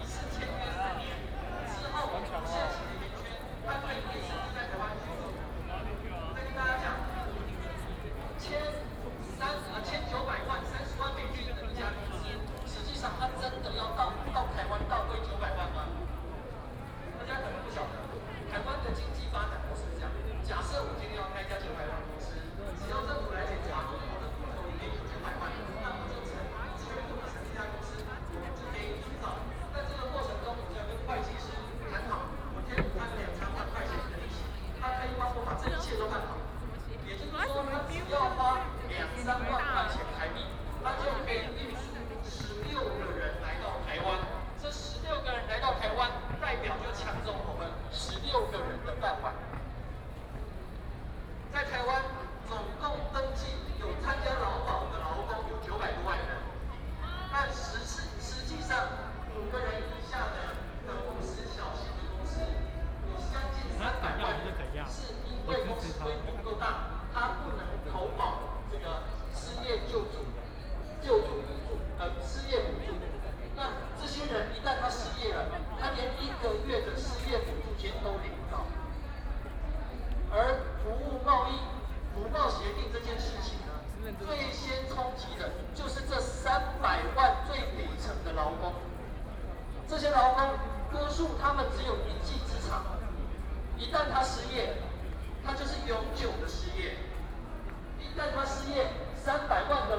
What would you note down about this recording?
Walking through the site in protest, People and students occupied the Legislative Yuan, Binaural recordings